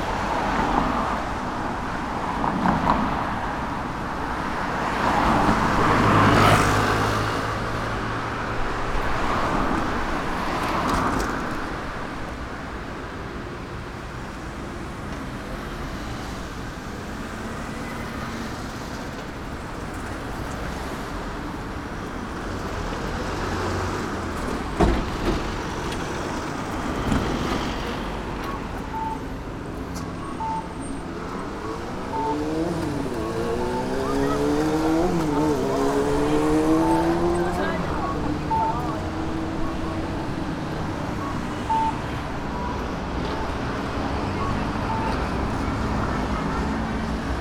traffic signals, cars, mopeds, people, river ...